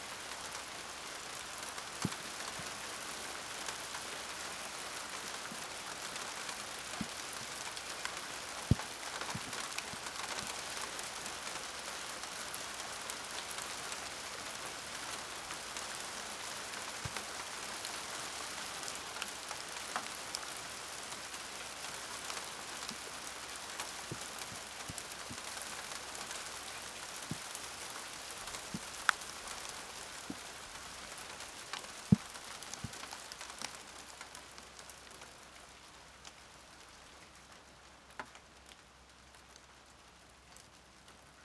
sudden ice rain, and it suddenly stops
(Sony PCM D50)